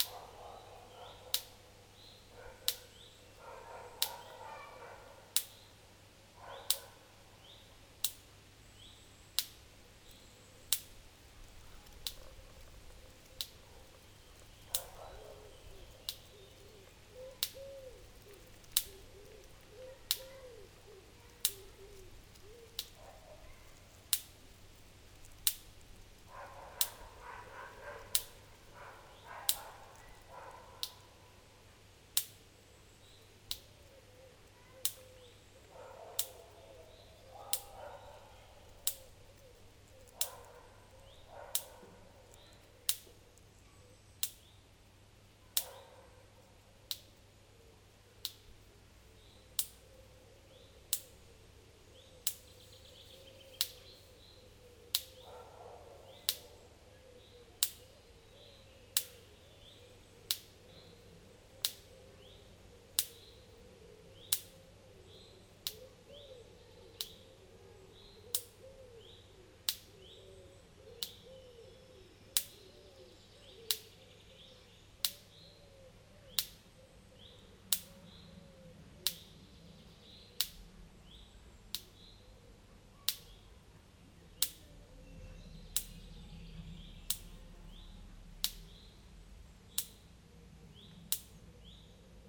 Genappe, Belgique - Electrical fence
During a walk in Genappe, I noticed a small problem in an electrical fence. A short circuit makes tac tac tac...
Genappe, Belgium